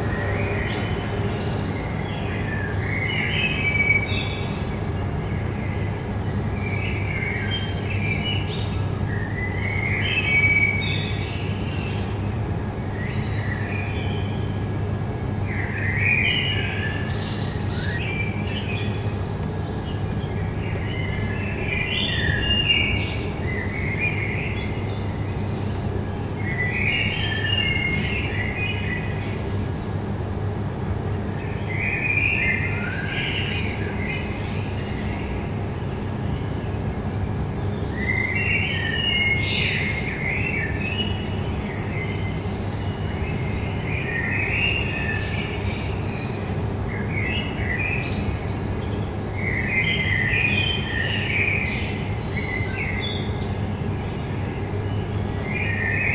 Rue Monserby, Toulouse, France - Dawn Chorus 01
bird song, city noise, metro, air conditioning noise
Captation : ZOOMH4n
Occitanie, France métropolitaine, France